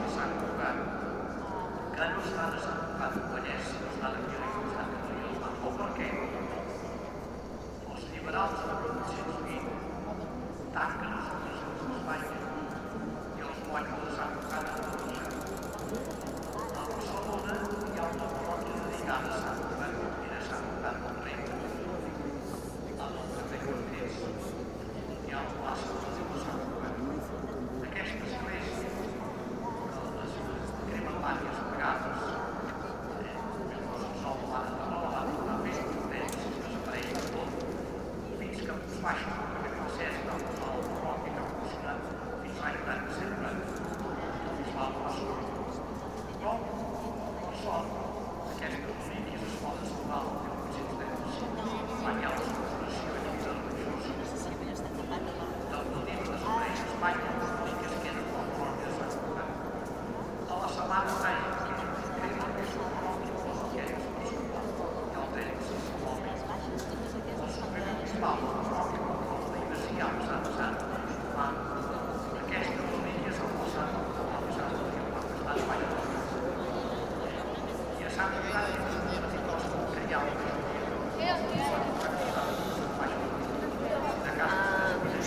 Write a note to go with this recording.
Inside the Catherdral. A man explaining the meaning and history with a microphone. Tourists all over the space.